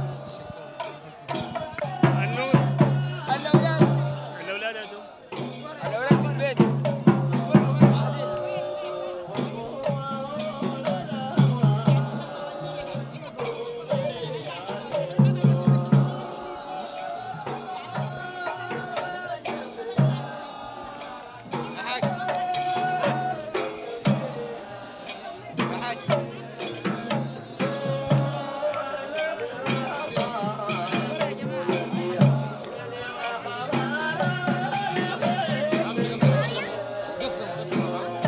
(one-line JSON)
{"title": "شارع الراشدين, Sudan - Dikhr in full swing @ tomb sheikh Hamad an-Neel", "date": "1987-05-08 16:30:00", "description": "dhikr. recorded with Marantz cassette recorder and 2 senheizer microphones", "latitude": "15.62", "longitude": "32.46", "altitude": "389", "timezone": "Africa/Khartoum"}